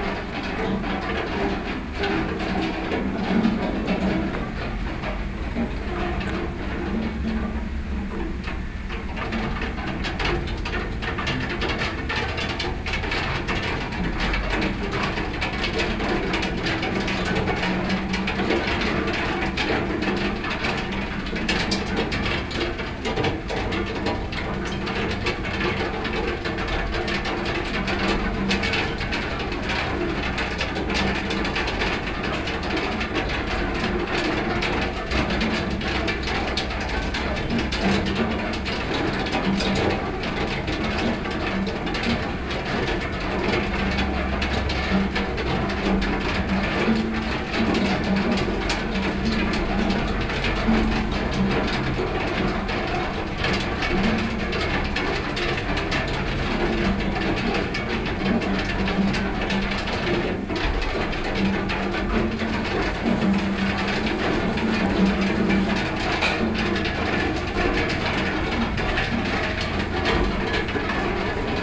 Flat nr. A.Navoi National Park, Tashkent - rain cascade
rain cascading off splash-boards at the back of third floor flat, recorded from open window
Tashkent, Uzbekistan, 22 March 2004